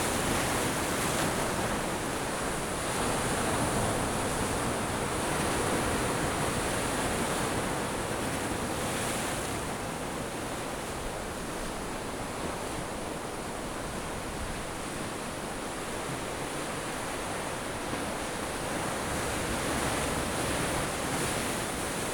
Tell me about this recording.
On the coast, Sound of the waves, Zoom H6 MS mic+ Rode NT4